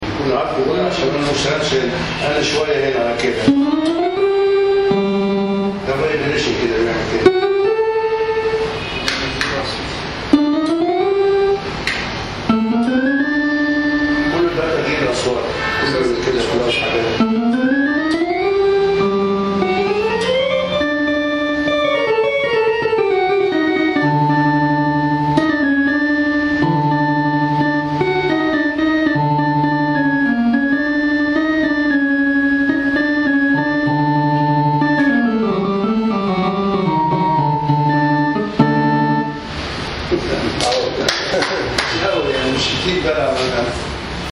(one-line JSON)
{"title": "Orgue électrique au marché de Bab el-Louk", "date": "2010-02-10 11:56:00", "description": "Monsieur Youssef, accordeur de piano dont la boutique est située au premier étage du marché de Bab el-Louk au Caire essaie pour nous son orgue électrique.", "latitude": "30.04", "longitude": "31.24", "altitude": "28", "timezone": "Africa/Cairo"}